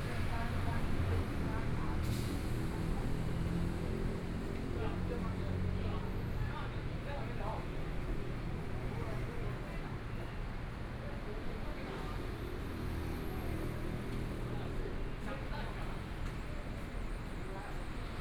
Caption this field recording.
Walking on the road （ZhongShan N.Rd.）from Jinzhou St to Nanjing E. Rd., Traffic Sound, Binaural recordings, Zoom H4n + Soundman OKM II